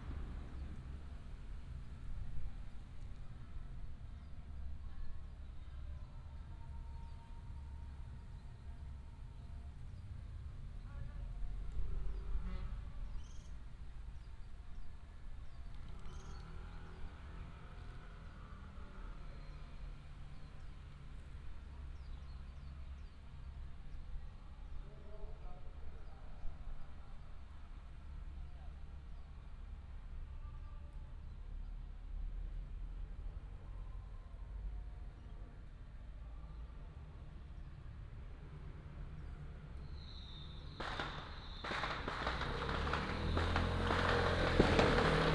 新吉里竹圍子社區, Huwei Township - in the Park
Firecrackers and fireworks, Matsu Pilgrimage Procession